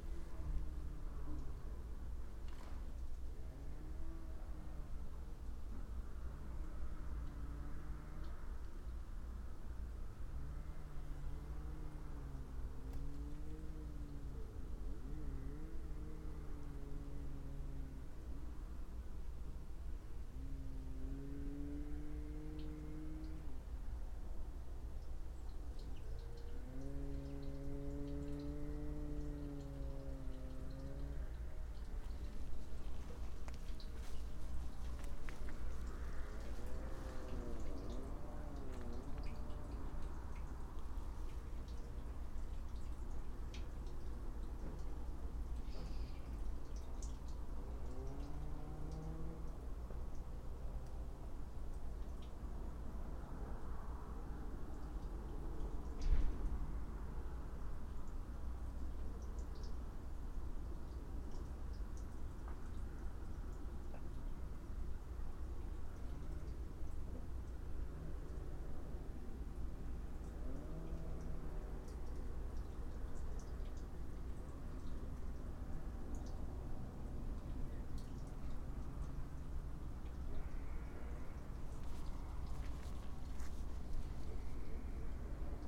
Grožnjan, Croatia - at the edge of the small city
before sundown, winter time, distant trunks sawing, water drippings